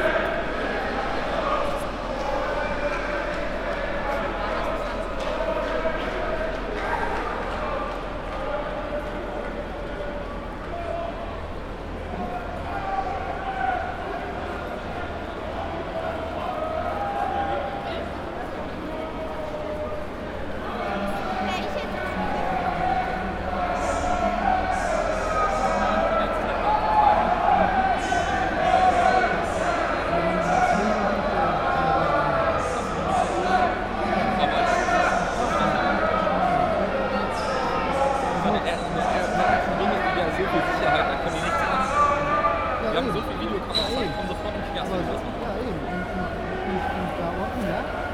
neoscenes: football fans in Kiel
Kiel, Germany